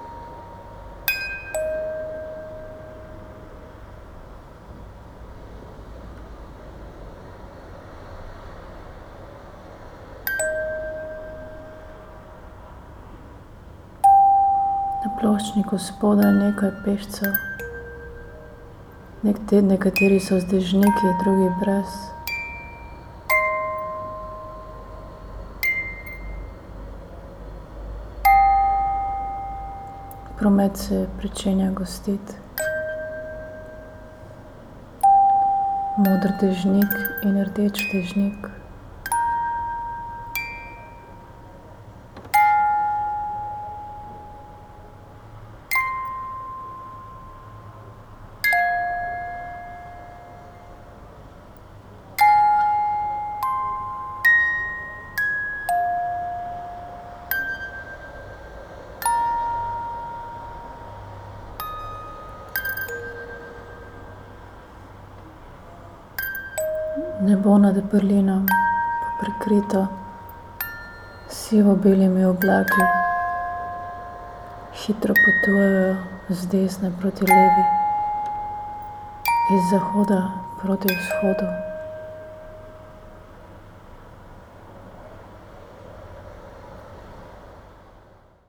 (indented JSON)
{
  "title": "writing reading window, Karl Liebknecht Straße, Berlin, Germany - Berliner Luft",
  "date": "2015-09-06 09:50:00",
  "description": "rainy sunday morning\nna plastični strehi sedi vrana\ndeževno dopoldan, nedelja, september\nposamezne kaplje dežja na pločevinasto polico\nmočan veter v krošjah dreves\nna pločniku spodaj nekaj pešcev, nekateri so z dežniki, drugi brez\npromet se pričenja gostit\nmoder dežnik in rdeč dežnik\nnebo nad Berlinom, prekrito s sivo-modrimi oblaki\nhitro potujejo z desne proti levi\niz zahoda proti vzhodu",
  "latitude": "52.52",
  "longitude": "13.41",
  "altitude": "47",
  "timezone": "Europe/Berlin"
}